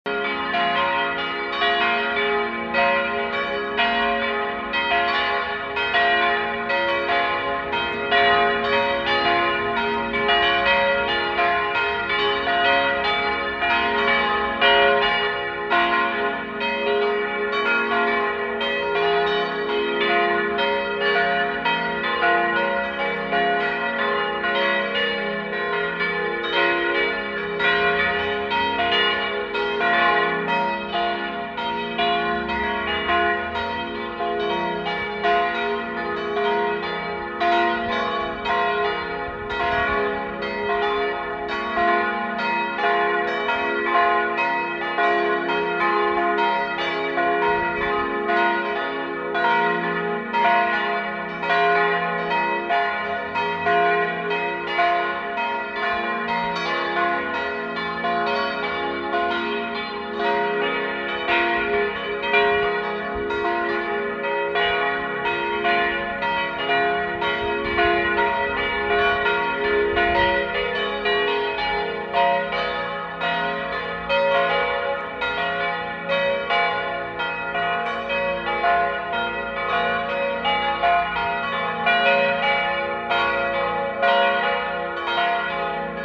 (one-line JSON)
{"title": "velbert, nevigeser strasse, friedenskirche, churchbells - velbert, nevigeser strasse, friedenskirche, glocken im nebel", "date": "2008-07-03 13:47:00", "description": "churchbells during a foggy sunday morning in the spring of 2007\nchurchbells during a foggy sunday morning in the spring of 2007\nproject: :resonanzen - neanderland - soundmap nrw: social ambiences/ listen to the people - in & outdoor nearfield recordings, listen to the people", "latitude": "51.33", "longitude": "7.06", "altitude": "261", "timezone": "Europe/Berlin"}